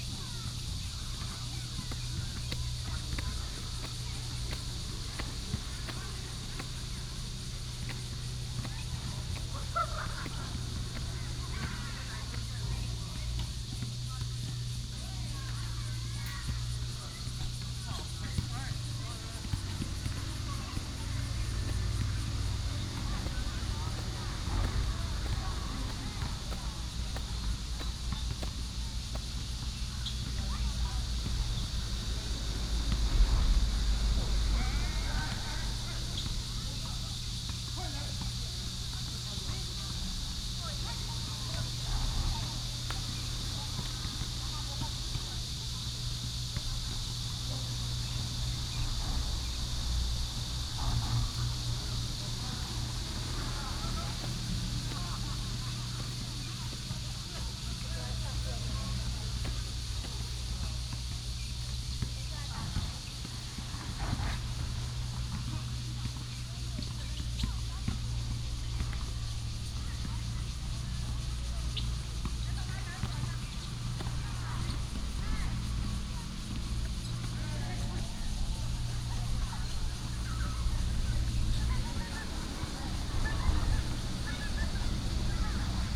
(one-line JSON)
{"title": "龍崗萬坪公園, Zhongli Dist., Taoyuan City - At the entrance to the park", "date": "2017-07-10 16:39:00", "description": "At the entrance to the park, Traffic sound, Cicadas, play basketball", "latitude": "24.93", "longitude": "121.25", "altitude": "165", "timezone": "Asia/Taipei"}